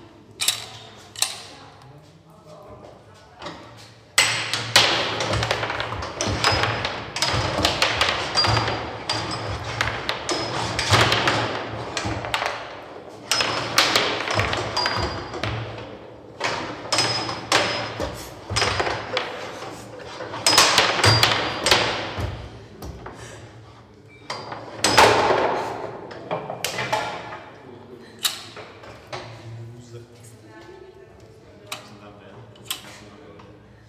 Litvínov, Česká republika - playing kicker
more infos in czech:
Česko, European Union, 26 May 2013, ~15:00